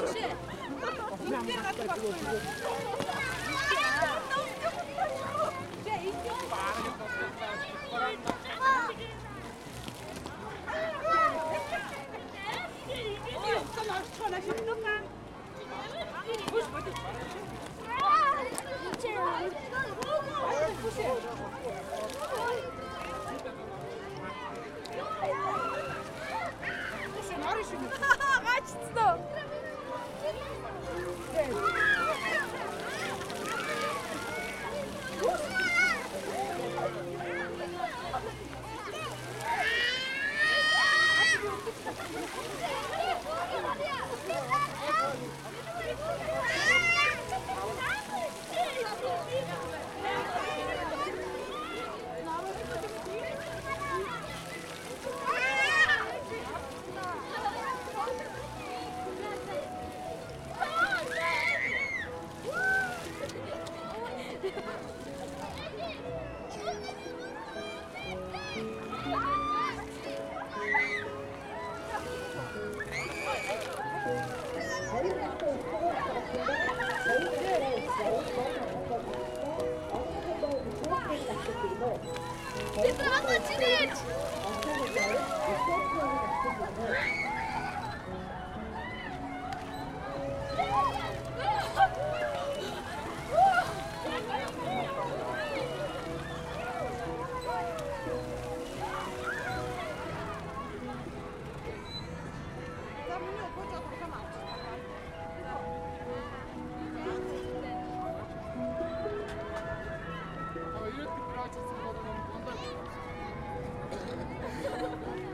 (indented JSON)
{
  "title": "National amusement park, Ulaanbaatar, Mongolei - air bike",
  "date": "2013-06-01 15:33:00",
  "description": "a walk under the air bike of the amusement park, play grounds and water games are audible too and especially the music and anouncements of the park out of loudspeakers that are camouflaged as stones - quite nice installation",
  "latitude": "47.91",
  "longitude": "106.92",
  "altitude": "1291",
  "timezone": "Asia/Ulaanbaatar"
}